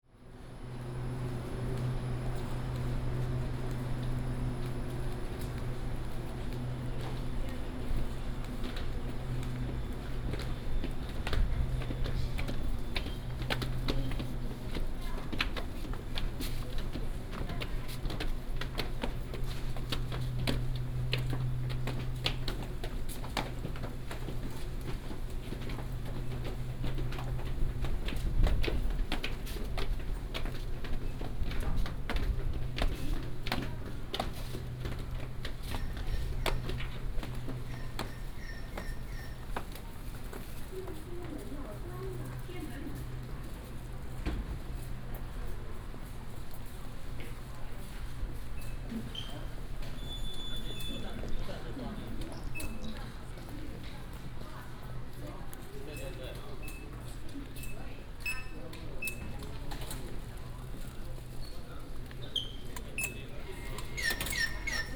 Miaoli Station, 苗栗縣苗栗市 - To export direction
Walk at the station, Footsteps, from the station platform to export direction
Miaoli City, Miaoli County, Taiwan